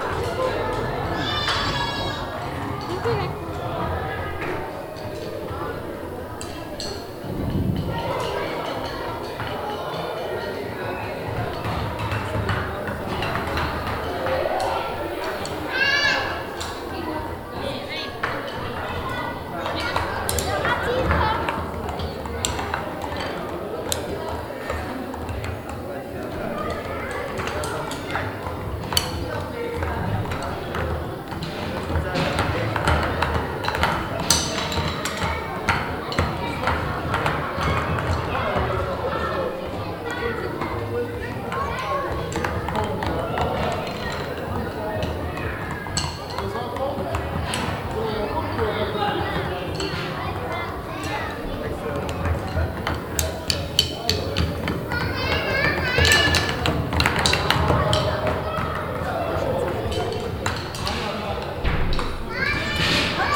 luxembourg, carrérotondes, drumtable
Inside CarréRotondes, a culture location with club and theatre hall, during an open public afternoon for kids and parents. The sound of several drum sticks hitting simultaneously on a wooden table with rubber pads as well as voices by kids and parents in a open reverbing hall.
international city scapes - social ambiences and topographic field recordings
7 November 2011, ~22:00